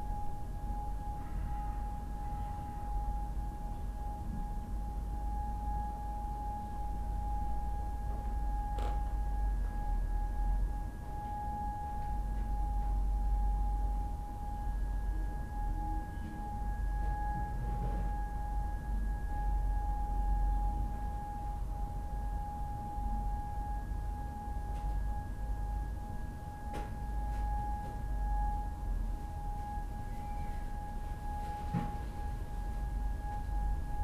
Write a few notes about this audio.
The Hinterhof is eerily quiet. It's as if many of those living here don't exist. Maybe they've gone away. I'm not hearing children any more. This tone is often present and sounds no different from always (it is an accidental wind flute from one of the external pipes from the heating system) but it's detail is more apparent with less urban rumble. There's a slightly harmonic hiss associated with it hasn't been clear till now. Also the city's sub bass is more audible. Interesting which sounds are revealed when normal acoustic backdrop changes. There also more sirens, presumably ambulances.